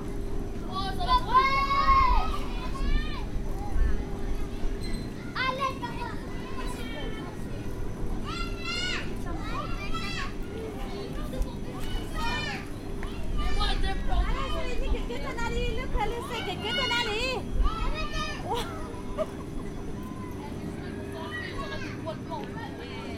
Parc de la Villette, Avenue Jean Jaurès, Paris, France - Parc de la Villette 1
recorded w/ Zoom H4n
2 August 2014